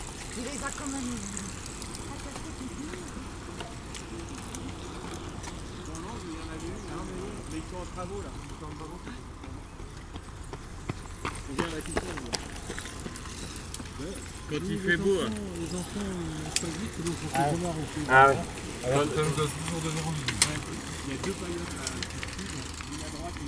Saint-Martin-de-Ré, France - Bicycles on Ré island
Lot and lot and lot of bicycles running on the touristic path between Saint-Martin-de-Ré and Loix villages.
20 May 2018